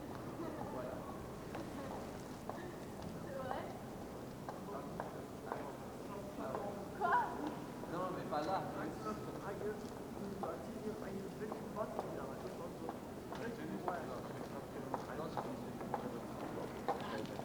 Berlin: Vermessungspunkt Friedel- / Pflügerstraße - Klangvermessung Kreuzkölln ::: 27.10.2012 ::: 03:56
Berlin, Germany, 27 October 2012